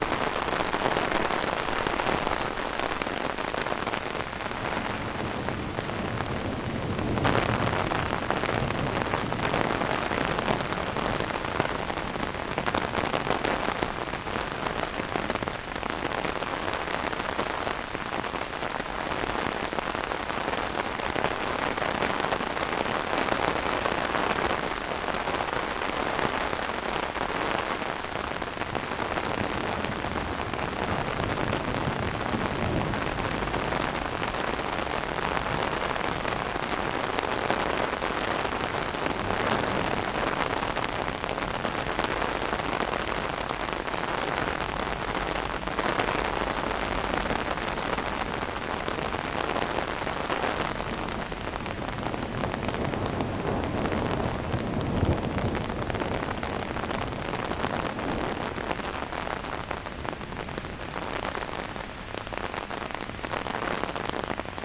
Innstraße, Innsbruck, Österreich - Rain on the umbrella
thunder and arin in the park, vogelweide, waltherpark, st. Nikolaus, mariahilf, innsbruck, stadtpotentiale 2017, bird lab, mapping waltherpark realities, kulturverein vogelweide
Innsbruck, Austria, June 2017